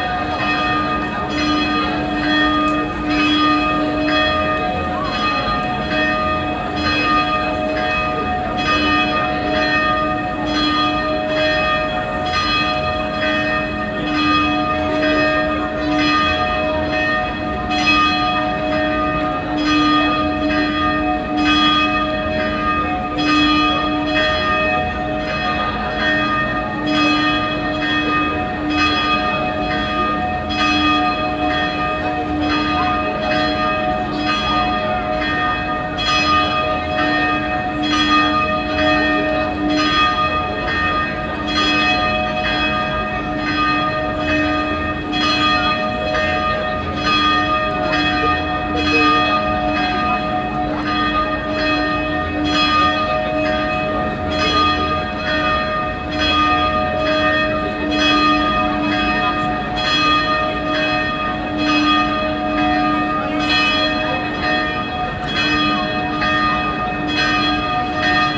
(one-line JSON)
{"title": "Stadtkern, Essen, Deutschland - essen, evangelian market church, bells", "date": "2014-04-26 16:00:00", "description": "Vor der evangelischen Marktkirche. Der Klang der vier Uhr Glocken an einem Samstag Nachmittag. Am Ende plus die Stunden Glocke der in der Nähe stehenden Dom Kirche. Im Hintergrund Stimmen und Schritte auf dem Marktplatz.\nIn front of the evangelian market church. The sound of the 4o clock bells - at the end plus the hour bells of the nearby dom church.", "latitude": "51.46", "longitude": "7.01", "altitude": "80", "timezone": "Europe/Berlin"}